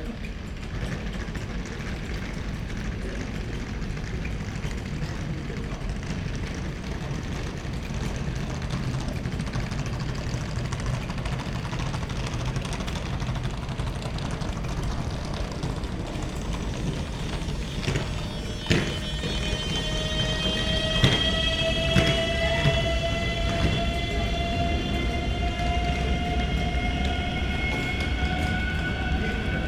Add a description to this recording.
Electric passenger train, LPV 1804 from Ljubljana, SI to Opcine, IT at 10:01. Sežana train station. Recorded with ZOOM H5 and LOM Uši Pro, Olson Wing array. Best with headphones.